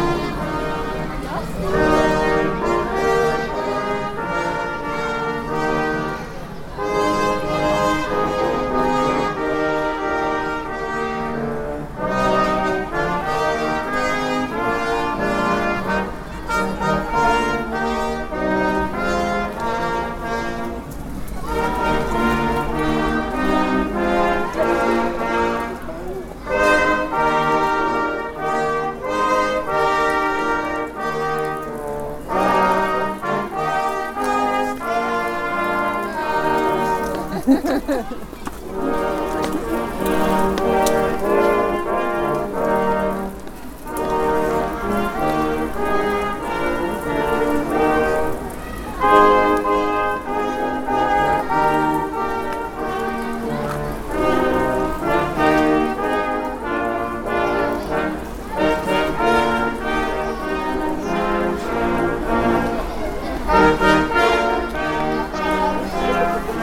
Cologne, Blumenthalstr., Deutschland - St. Martins procession
Children of a kindergarden and their parents carry paper lanterns and sing St. Martins songs. The brass music is performed by elderly pupils